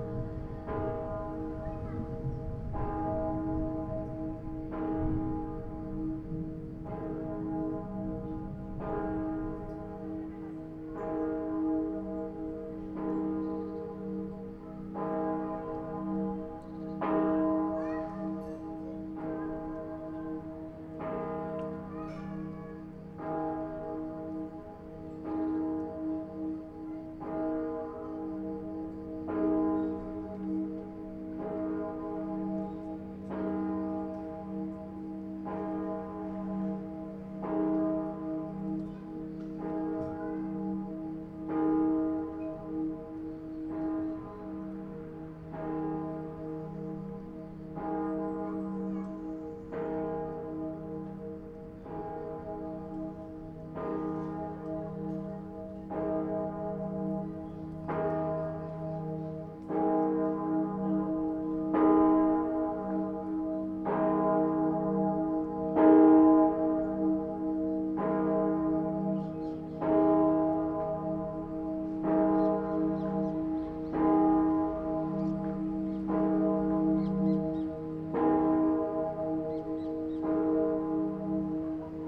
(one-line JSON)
{"title": "Rue de la Capitale, Marseille, France - Marseille - quartier du Roucas-Blanc - Festivité du 15 Août.", "date": "2022-08-14 20:30:00", "description": "Marseille - quartier du Roucas-Blanc\nFestivité du 15 Août.\nLa procession arrive à la bonne mère.\nLe son du bourdon résonne sur la ville pendant que l'orage gronde.\nPrise de son : JF CAVRO\nZoom F3 + Neuman KM 184", "latitude": "43.28", "longitude": "5.37", "altitude": "74", "timezone": "Europe/Paris"}